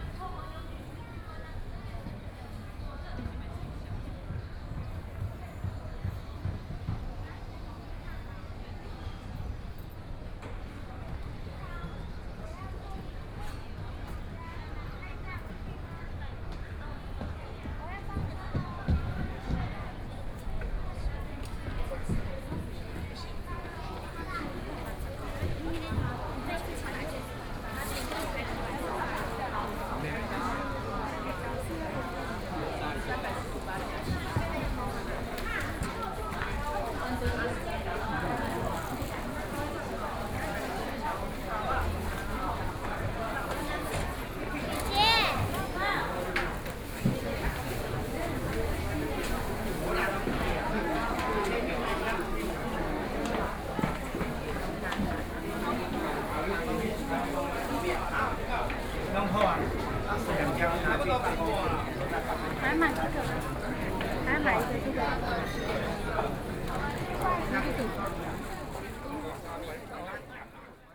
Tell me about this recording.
in the Parks and the temples, Sony PCM D50+ Soundman OKM II